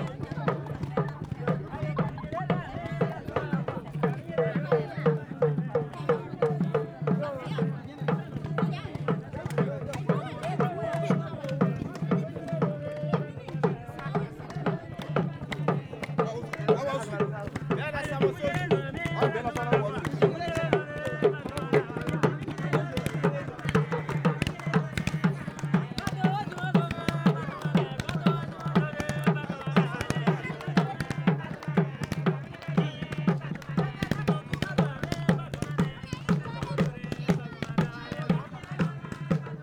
Sourou, Burkina Faso - Traditionnale Singings
Party organized at night around fire, singings
Lanfièra, Burkina Faso, 22 May 2016